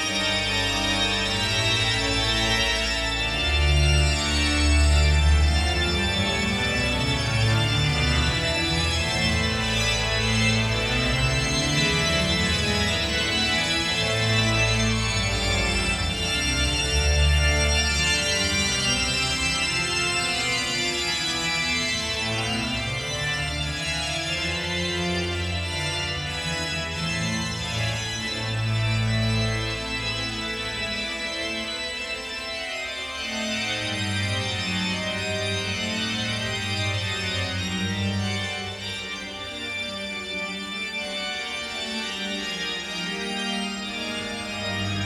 Cathédrale de Noyon, Grandes Orgues, la répétition
Noyon, France, 15 July 2011